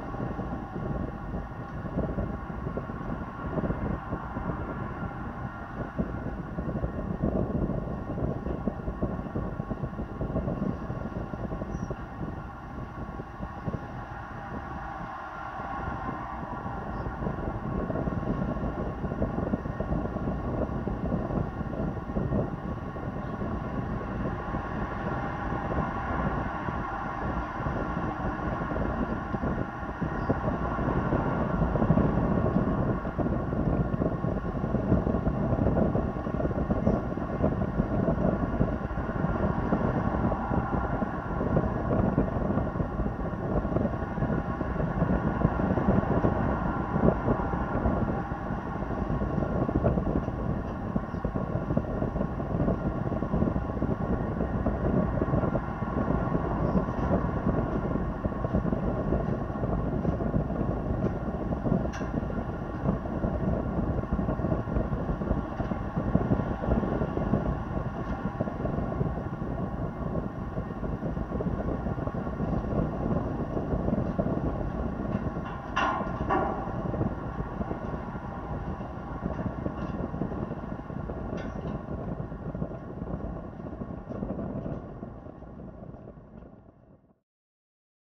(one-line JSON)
{"title": "Galatas, Crete, on handrails of a pedestrian bridge", "date": "2019-05-02 13:40:00", "description": "contact microphones on a handrails of pedestrian bridge. very windy day", "latitude": "35.51", "longitude": "23.96", "altitude": "5", "timezone": "Europe/Athens"}